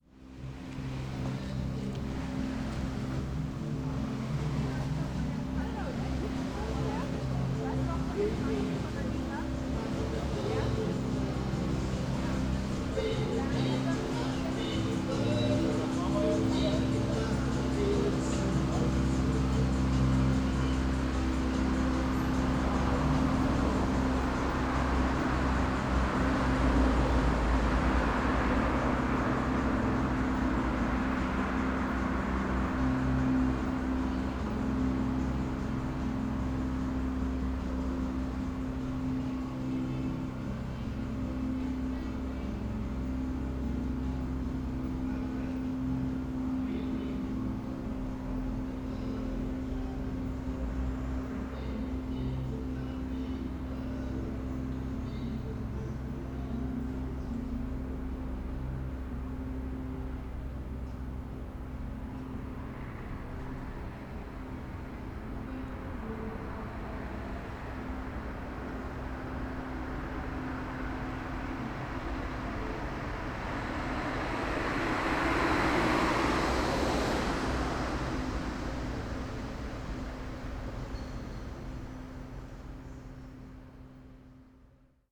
berlin: maybachufer - the city, the country & me: party boat
party boat on landwehrkanal passing by
the city, the country & me: august 20, 2010
Berlin, Deutschland, 20 August